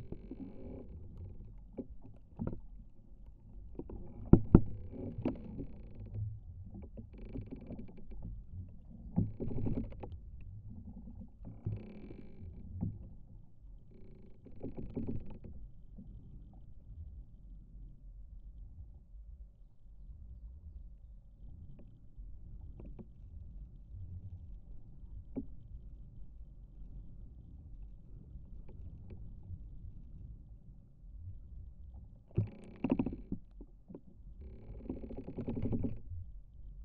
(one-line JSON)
{"title": "Šeimyniškiai, Lithuania, fallen tree", "date": "2022-05-17 18:10:00", "description": "Contact microphone on a wind-broken tree", "latitude": "55.54", "longitude": "25.58", "altitude": "116", "timezone": "Europe/Vilnius"}